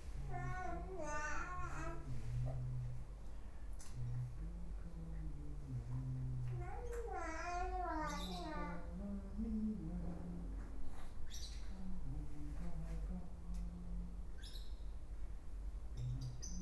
sounds of intimacy
in my home with my son...
Ascoli Piceno Province of Ascoli Piceno, Italy, 18 July, ~11:00